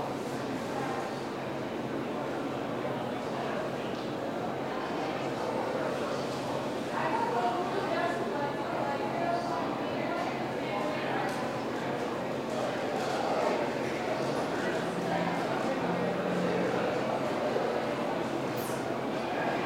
Midtown, Baltimore, MD, USA - Awaiting the Train
Recorded in Penn Station with a H4n Zoom.
21 November 2016, 11:15